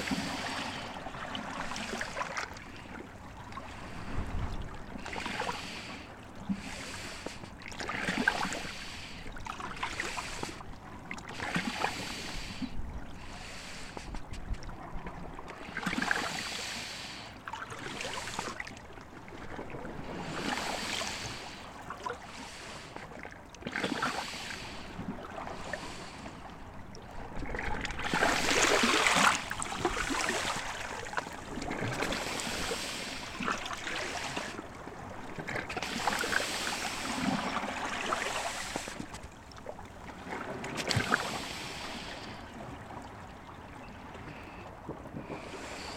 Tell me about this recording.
effect of breathing sea. small microphones placed among the stones